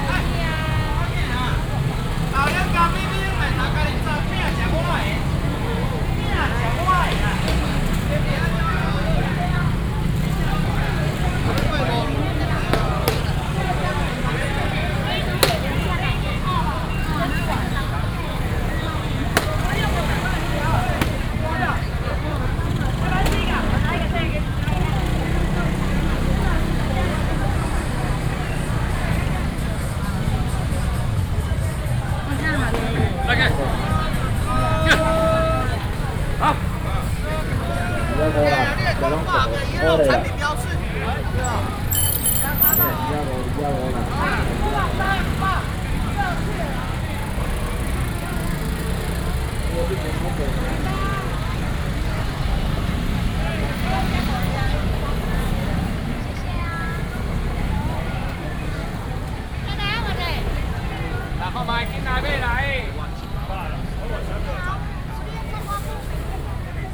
Datong St., Shalu Dist., Taichung City - noisy market
Traditional markets, Very noisy market, Street vendors selling voice, A lot of motorcycle sounds